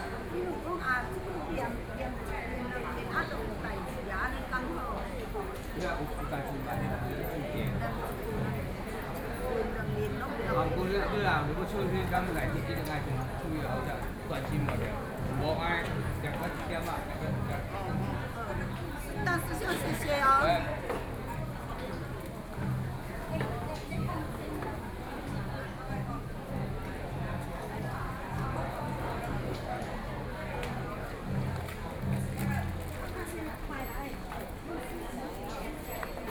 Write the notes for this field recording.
hundreds of old woman are sitting in the temple chanting together, Sony PCM D50 + Soundman OKM II